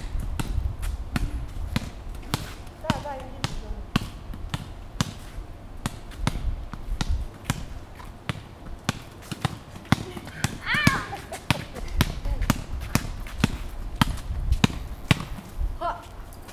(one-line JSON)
{"title": "AfricanTide, Igglehorst, Dortmund - Corinas ball game...", "date": "2017-05-15 10:15:00", "description": "Corina is one of the ladies looking after the children at AfricanTide Igglehorst.", "latitude": "51.51", "longitude": "7.41", "altitude": "87", "timezone": "Europe/Berlin"}